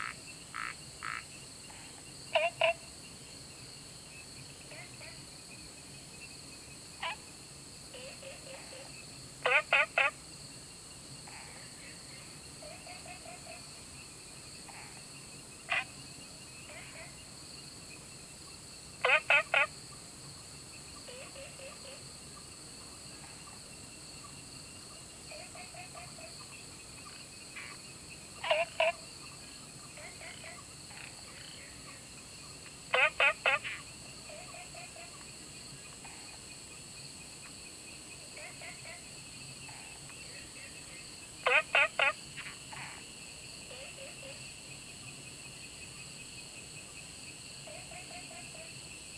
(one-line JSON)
{"title": "桃米巷, 南投縣埔里鎮桃米里 - Frogs chirping", "date": "2015-08-10 20:45:00", "description": "Sound of insects, Frogs chirping\nZoom H2n MS+XY", "latitude": "23.94", "longitude": "120.94", "altitude": "495", "timezone": "Asia/Taipei"}